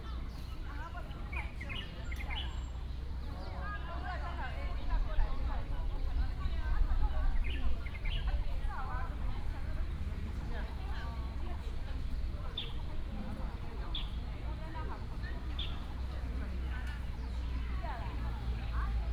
Chiayi Park, Chiayi City - in the Park
in the Park, birds sound
Chiayi City, Taiwan, 18 April